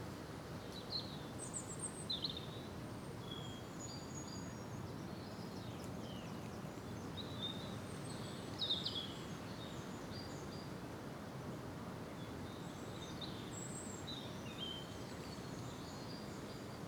Contención Island Day 72 outer east - Walking to the sounds of Contención Island Day 72 Wednesday March 17th
The Drive High Street Moorfield Little Moor Jesmond Dene Road Osborne Road Mitchell Avenue North Jesmond Avenue Newbrough Crescent Osborne Road Reid Park Road
By a 12th century chapel
a place of pilgrimage
in St Mary’s name
A dell below me is overgrown
untended
and a riot of birds
Against the bright sunlight
I see mainly shapes
flying in and out
Two bursts of a woodpecker’s drum
counterpoint
to the bin wagon’s slow thumping approach